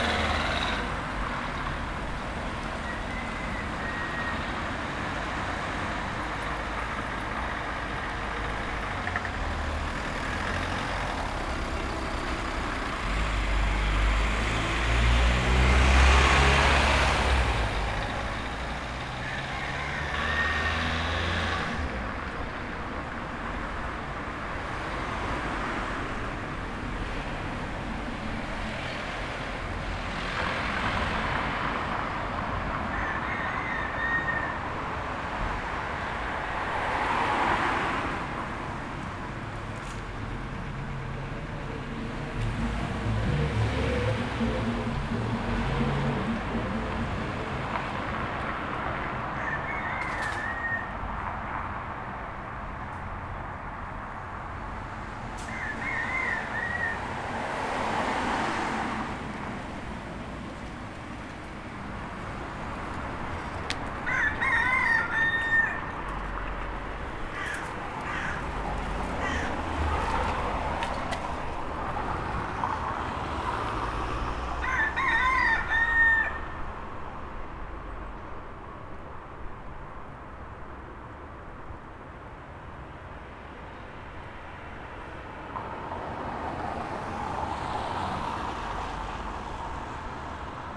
Binckhorst Mapping Project: Los Gallos. 12-02-2011/16:40h - Binckhorst Mapping Project: Los Gallos
Binckhorst Mapping Project: Los gallos
The Hague, The Netherlands, December 2011